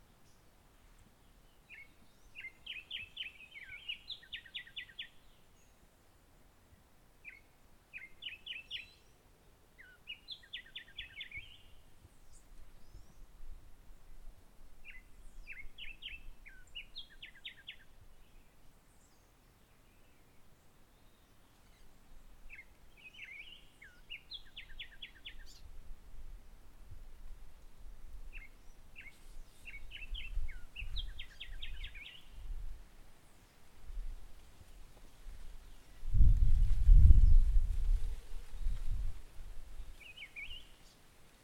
Hato Corozal, Casanare, Colombia - Màs aves